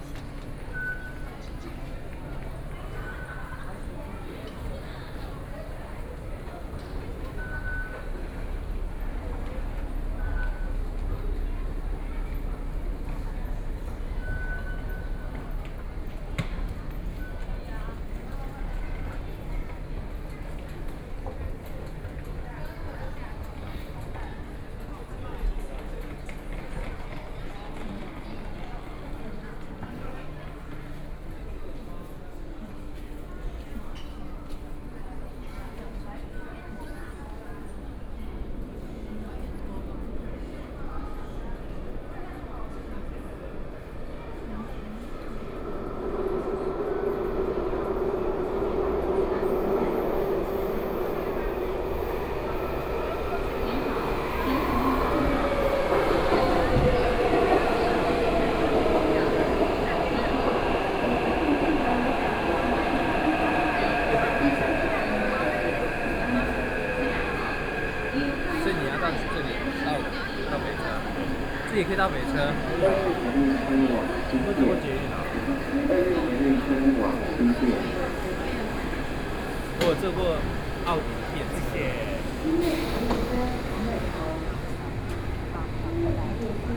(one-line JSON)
{"title": "Shuanglian Station, Taipei - Walking in the Station", "date": "2014-04-27 11:33:00", "description": "Walking into the Station\nSony PCM D50+ Soundman OKM II", "latitude": "25.06", "longitude": "121.52", "timezone": "Asia/Taipei"}